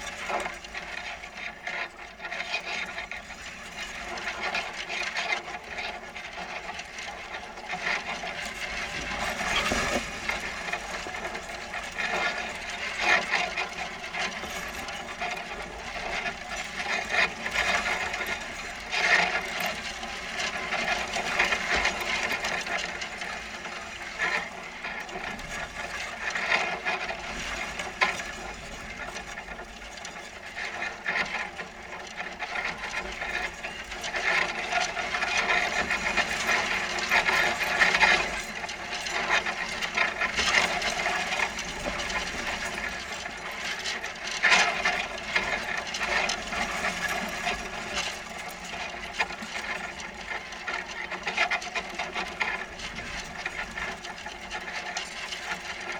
rusty wire hidden in grass. contact microphones recording
19 April 2012, ~16:00